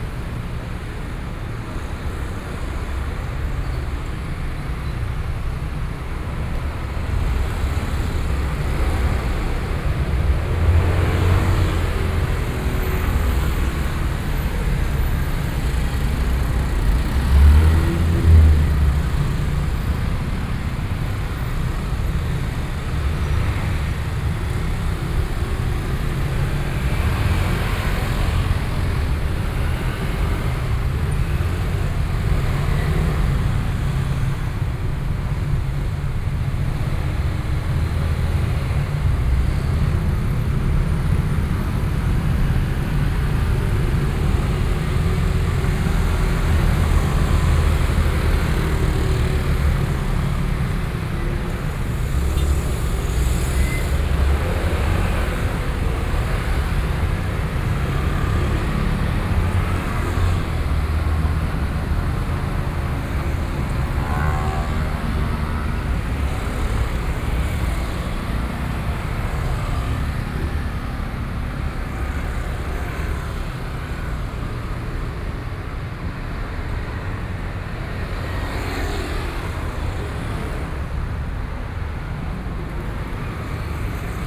Linsen Rd., Taoyuan - in front of the underpass
in front of the underpass, Hours of traffic noise, Sony PCM D50 + Soundman OKM II